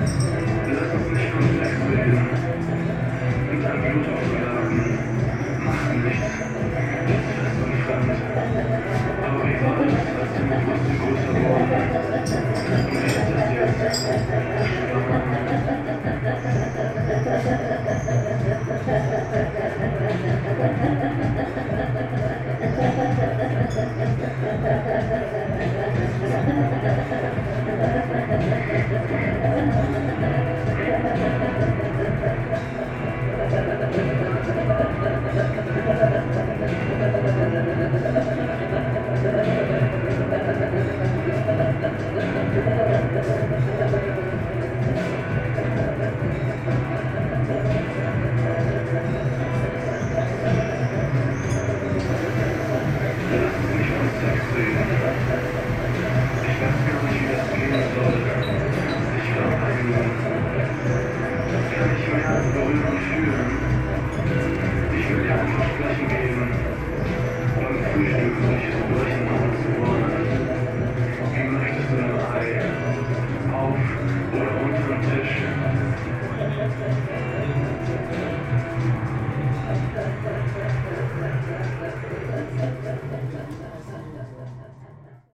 friedelstraße: der sturm - the city, the country & me: der sturm
project room, bar "der sturm"
the city, the country & me: september 2008
7 October 2008, ~13:00